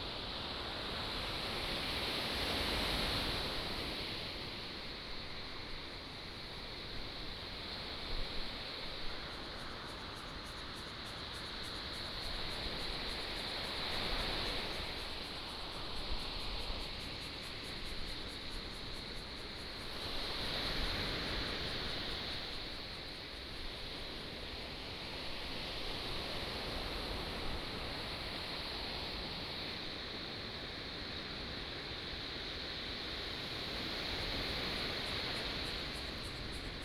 長光, Changbin Township - sound of the waves
sound of the waves